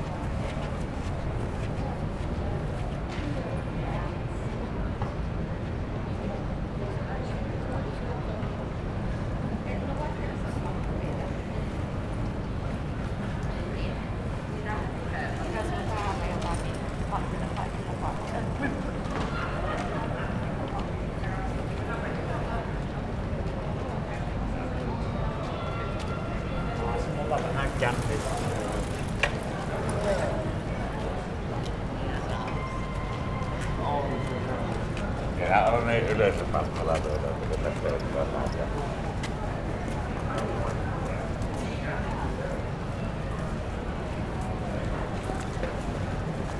{
  "title": "Kauppurienkatu, Oulu, Finland - Friday evening at the centre of Oulu",
  "date": "2020-06-12 18:39:00",
  "description": "One of the busiest pedestrian intersections in Oulu during a warm summer evening. Lots of happy people going by as it's friday. Zoom h5, default X/Y module.",
  "latitude": "65.01",
  "longitude": "25.47",
  "altitude": "15",
  "timezone": "Europe/Helsinki"
}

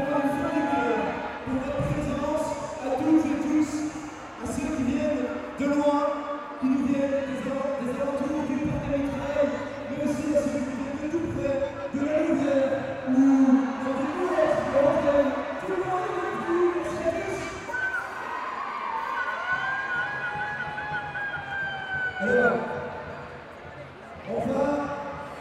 {
  "title": "Mons, Belgium - K8strax race - Shouting",
  "date": "2017-10-21 11:30:00",
  "description": "Just before the scout k8strax race begins, the race manager asks the scouts to shout as much as they can. And just after that, he said : I will ask the boys to shout as girls, and I will ask the girls to shout as boys ! That's why it's quite strange ! A scout race without shouting doesn't exists !",
  "latitude": "50.46",
  "longitude": "3.94",
  "altitude": "30",
  "timezone": "Europe/Brussels"
}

